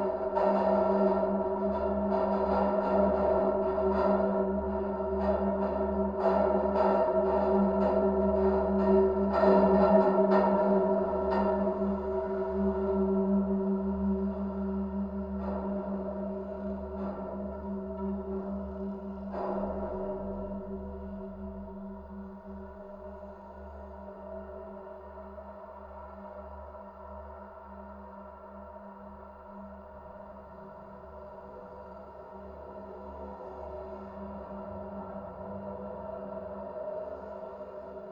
{
  "title": "Faliro station, Piraeus, Athen - pedestrian bridge",
  "date": "2016-04-08 19:25:00",
  "description": "metal pedestrian bridge from tram to metro station, above the motorway\n(Sony PCM D50, DIY contact mics)",
  "latitude": "37.94",
  "longitude": "23.66",
  "altitude": "6",
  "timezone": "Europe/Athens"
}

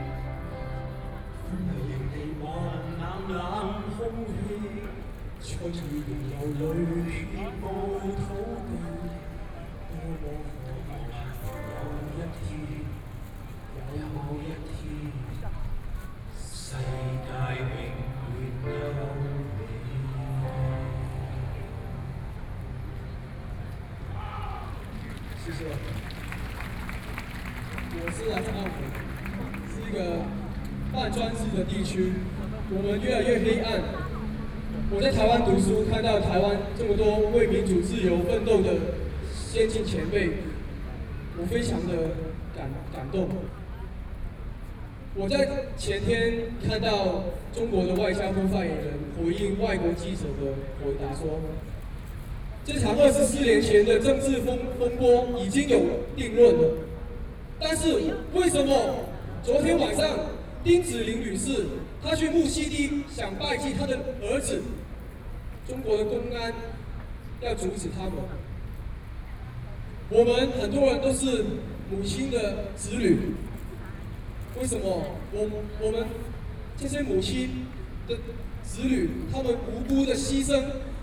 {
  "title": "National Chiang Kai-shek Memorial Hall, Taipei - singing",
  "date": "2013-06-04 19:53:00",
  "description": "event activity of the Tiananmen Square protests, A Hong Kong students are singing, Sony PCM D50 + Soundman OKM II",
  "latitude": "25.04",
  "longitude": "121.52",
  "altitude": "8",
  "timezone": "Asia/Taipei"
}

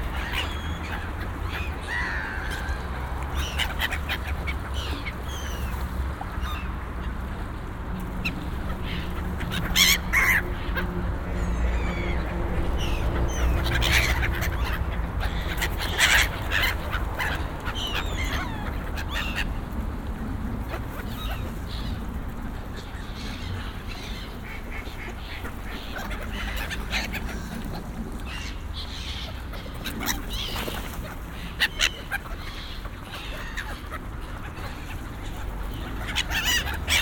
{
  "title": "Most Groszowy w Opolu, Opole, Polska - (44) Ducks quacking near the Groszowy Bridge",
  "date": "2016-11-13 17:35:00",
  "description": "Ducks quacking near the Groszowy Bridge.\nbinaural recording with Soundman OKM + Zoom H2n\nsound posted by Katarzyna Trzeciak",
  "latitude": "50.67",
  "longitude": "17.92",
  "altitude": "156",
  "timezone": "Europe/Warsaw"
}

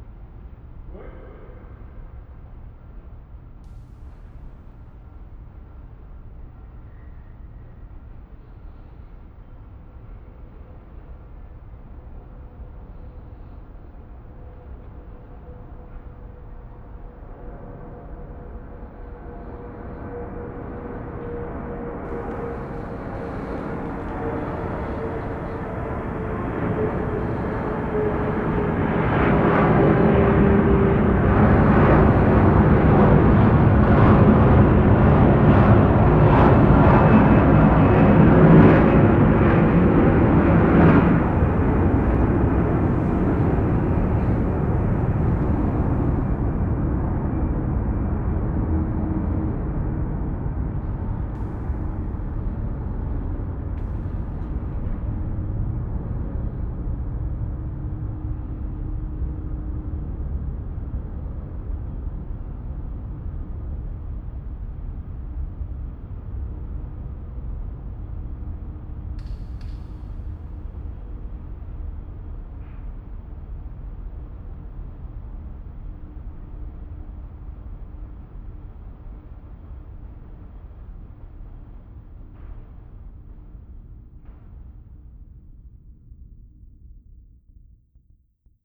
{
  "title": "Arena-Sportpark, Am Staad, Düsseldorf, Deutschland - Düsseldorf, Esprita Arena, stadium",
  "date": "2012-12-18 12:30:00",
  "description": "Inside the football stadium. The sounds of planes flying across the open football field and reverbing in the audience space and a crow chirping in the open building.\nThis recording is part of the intermedia sound art exhibition project - sonic states\nsoundmap nrw -topographic field recordings, social ambiences and art places",
  "latitude": "51.26",
  "longitude": "6.73",
  "altitude": "38",
  "timezone": "Europe/Berlin"
}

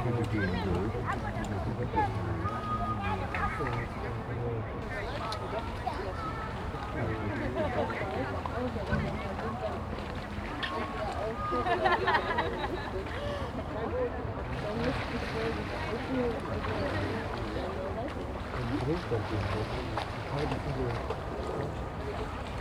{
  "title": "Strandbad Süd, Düsseldorf, Deutschland - Düsseldorf, Unterbacher See, surf station",
  "date": "2013-08-11 16:30:00",
  "description": "At the lake side on a sunny summer day. The sounds of people talking about a stolen bicycle, plus wind, seagulls and surfer on the lake.\nsoundmap nrw - social ambiences and topographic field recordings",
  "latitude": "51.19",
  "longitude": "6.88",
  "altitude": "43",
  "timezone": "Europe/Berlin"
}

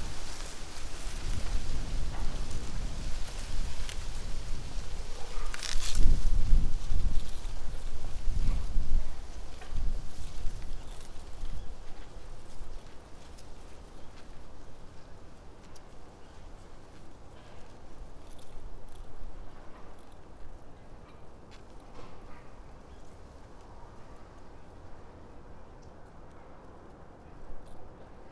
{
  "title": "chome minamiaoyama, tokyo - wind, bamboo, stony wall, walk",
  "date": "2013-11-19 14:39:00",
  "latitude": "35.66",
  "longitude": "139.72",
  "altitude": "26",
  "timezone": "Asia/Tokyo"
}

